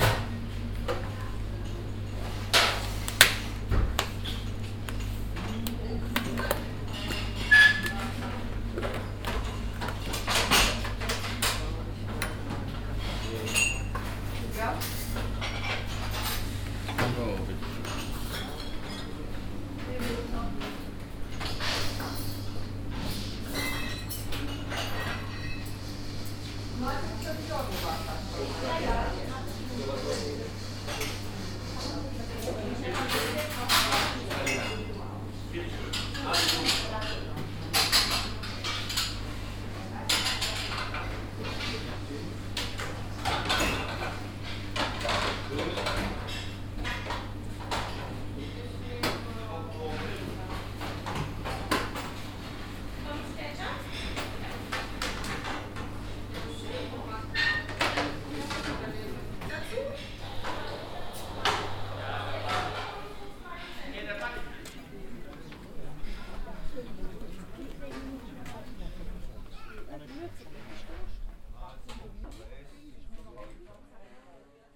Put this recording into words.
a tourist restaurant outdoor and indoar at the biggest european earh damm, soundmap nrw - social ambiences and topographic field recordings